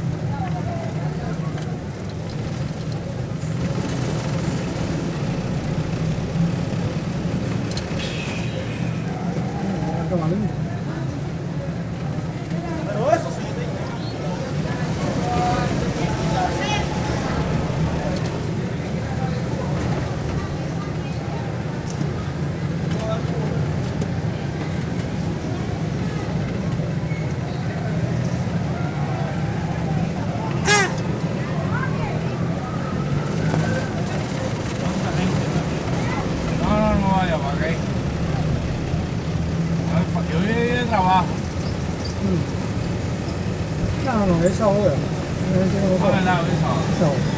riding in a Jeep, driving down calle Enramada, one of the main shopping streets in Santiago
Santiago de Cuba, 6 December 2003